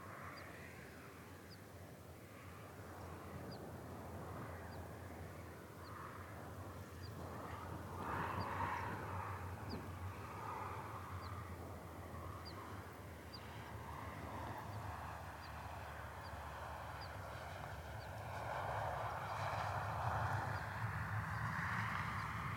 near Windhouse, Yell, Shetland Islands, UK - Sheep grazing right beside an inlet
A lovely situation which I had passed in the car the day before; sheep grazing right beside the water, actually going right down to the water to eat the seaweed in some places. These sheep - like all prey, I guess - ran away from me as soon as I approached them, so I set my FOSTEX FR-2LE and Naiant X-X microphones down in the grass near a bit of bank covered in bits of wool (I think the sheep scratch against the earth there) and went away onto the other side of the bank, so as to hopefully encourage the sheep to approach my recorder, and remove my own threatening presence from their grazing area. You can faintly hear the sheep passing through the grass, and baa-ing to each other, you can also hear the wind, and some birds quite distantly. It's very windy in Shetland, especially in an exposed spot like this.